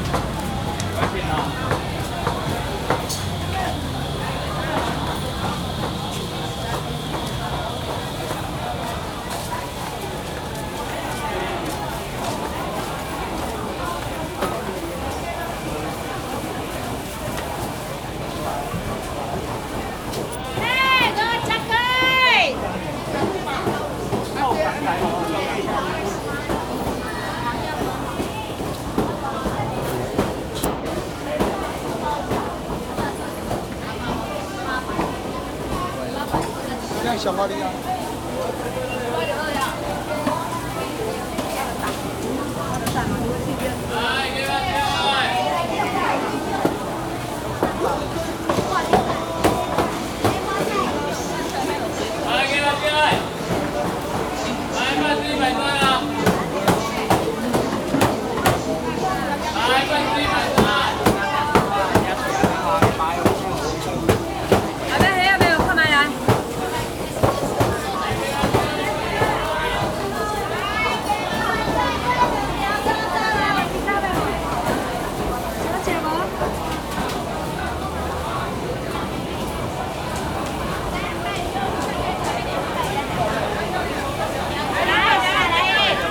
美秀傳統市場, Xinzhuang Dist., New Taipei City - Walking through the traditional market
Walking through the traditional market
Zoom H4n
New Taipei City, Taiwan, 23 June